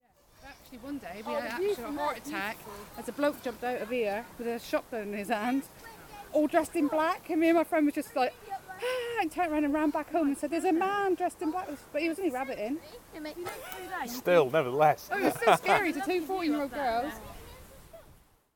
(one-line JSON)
{"title": "Efford Walk Two: Rabbiting man - Rabbiting man", "date": "2010-09-24 16:30:00", "latitude": "50.39", "longitude": "-4.10", "altitude": "82", "timezone": "Europe/London"}